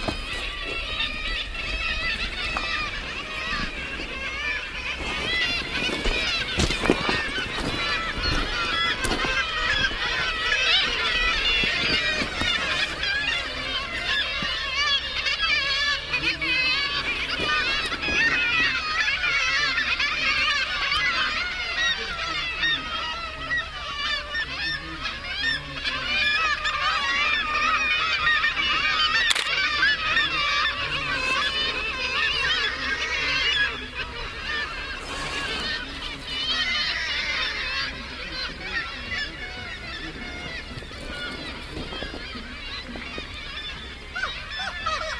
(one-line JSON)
{"title": "Svalbard, Svalbard and Jan Mayen - Pyramiden Пирамида", "date": "2011-08-28 14:37:00", "description": "Pyramiden is a russian mining town which once had a population of over 1,000 inhabitants, [1] but was abandoned on 10 January 1998 by its owner, the state-owned Russian company Arktikugol Trust. It is now a ghost town. Within the buildings, things remain largely as they were when the settlement was abandoned in a hurry. The place is about to re-open as a turist attraction. I went on a boat trip to Pyramiden and because the danger of polar bears, I had to stick to the group and there were no time for recordings. These recordings are from outside the Wodka bar at the Hotel, where thousends of Kittiwakes had sqatted one of the abandonned buildings.", "latitude": "78.68", "longitude": "16.45", "timezone": "Arctic/Longyearbyen"}